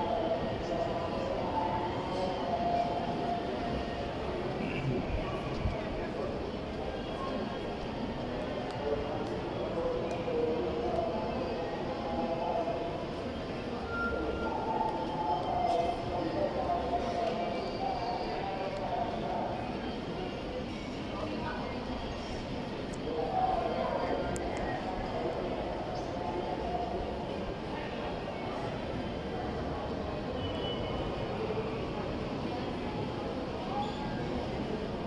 Cl., Medellín, Antioquia, Colombia - Estación metro San Antonio
Información Geoespacial
(latitud: 6.247298, longitud: -75.569717)
Estación San Antonio
Descripción
Sonido Tónico: Bulla de gente hablando
Señal Sonora: Llegada del metro
Micrófono dinámico (celular)
Altura: 1,60 cm
Duración: 2:50
Luis Miguel Henao
Daniel Zuluaga
5 November 2021